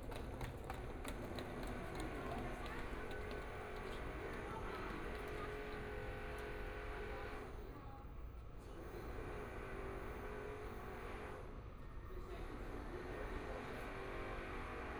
Fuxinggang Station, Taipei - On the platform
In the MRT station platform, Waiting for the train
Binaural recordings, ( Proposal to turn up the volume )
Zoom H4n+ Soundman OKM II